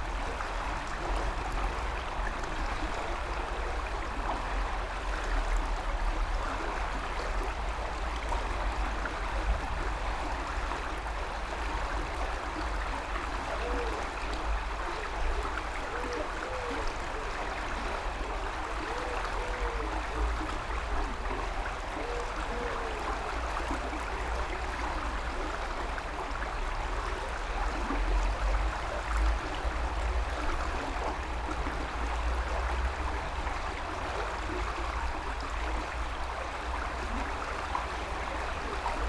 Sound of river Alzette in a concrete canal, near Rue Léon Metz
(Sony PCM D50, Primo EM272)
Canton Esch-sur-Alzette, Lëtzebuerg, 11 May